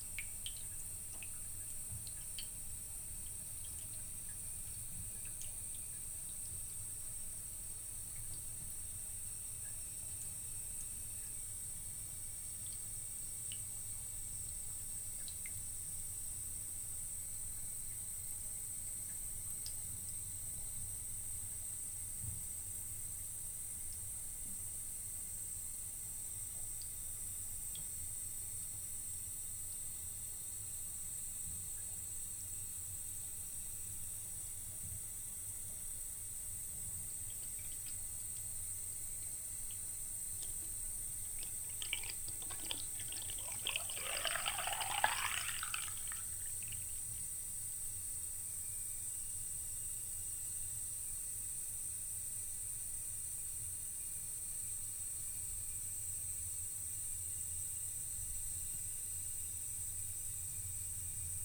Unnamed Road, Malton, UK - pulling the bath plug ...
pulling the bath plug ... olympus ls 14 integral mics ... on a tripod ...